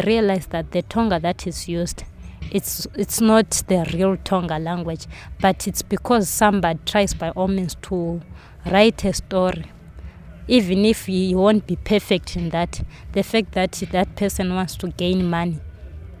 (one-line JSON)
{"title": "Binga Craft Centre, Zimbabwe - Linda Mudimba – education for rural women like me…", "date": "2012-11-08 16:33:00", "description": "We are sitting with Linda in front of the Binga Craft Centre. I caught up with her here after Linda had a long day of working on deadlines in Basilwizi’s office. We are facing the busiest spot in the district; the market, shops, bars and taxi rank paint a vivid ambient backing track… Linda tells about the challenges that education poses to people from the rural areas and to women in particular; as well as the added challenge young BaTonga are facing as members of a minority tribe in Zimbabwe…", "latitude": "-17.62", "longitude": "27.34", "altitude": "609", "timezone": "Africa/Harare"}